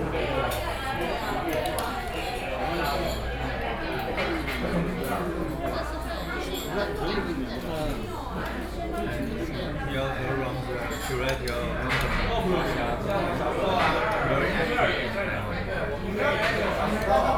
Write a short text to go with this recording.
Artists from different countries are dinner, Sony PCM D50 + Soundman OKM II